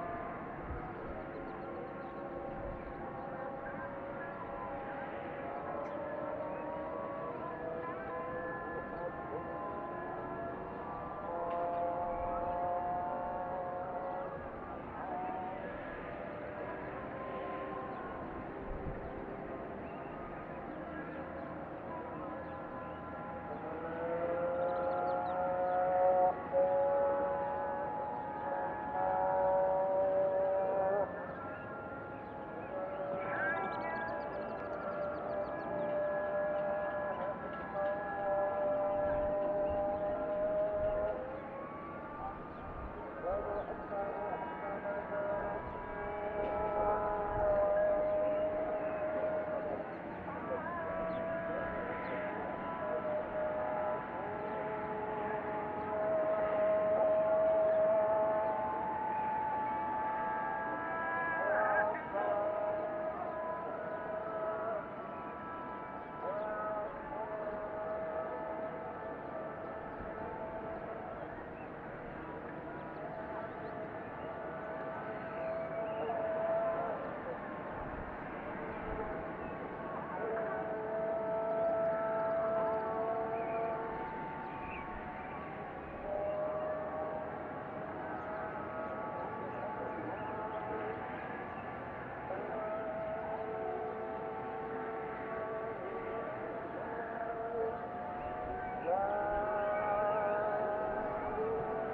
Inane Sghir, Fès, Morocco - The Adhan, or Call to Prayer
From this spot, one can see across the old city of Fès, or Fès Bali, and hear all the sounds emanating from the city. This recording was made during the afternoon adhan, where the voices from many mosques mix with the natural sounds.
Fes, Morocco, 18 April 2014